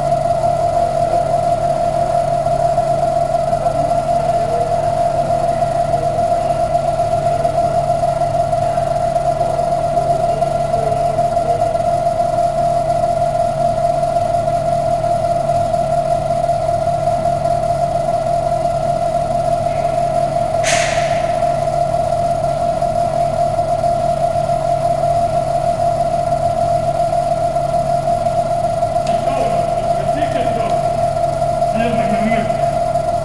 Geležinkelio g., Vilnius, Lithuania - LED information board hum
Resonant hum of an LED board in a subterranean passage underneath Vilnius train station. Recorded from a point-blank distance with ZOOM H5.
Vilniaus apskritis, Lietuva, 30 January